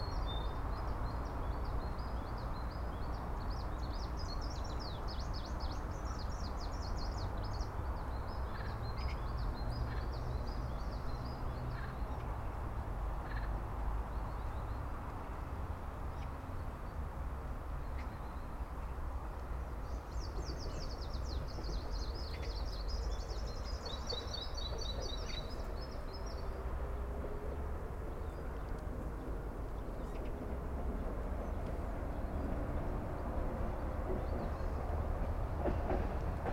Барнаул, Алтайский край, Россия - Малаховские болота
Marsh near Malakhov street, Barnaul. Frogs, birds, distant tram, ambient sounds.
Altayskiy kray, Russia